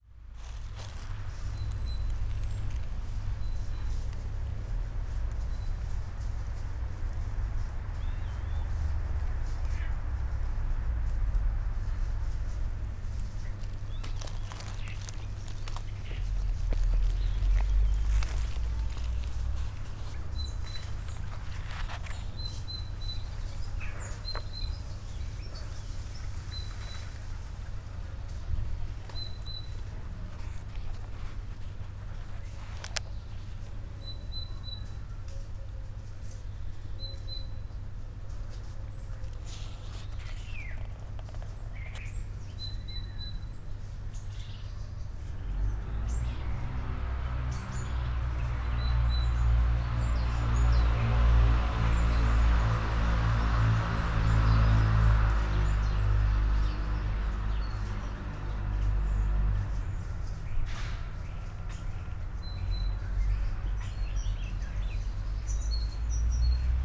poems garden, Via Pasquale Besenghi, Trieste, Italy - could be secret garden
overgrown garden, trees and abandoned, fenced well, birds and mosquitoes allover ...
7 September, 15:10